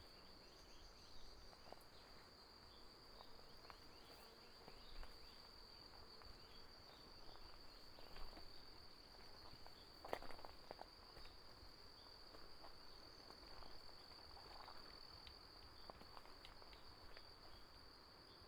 達保農場, 達仁鄉台東縣 - early morning
early morning, Bird cry, Stream sound
Taitung County, Taiwan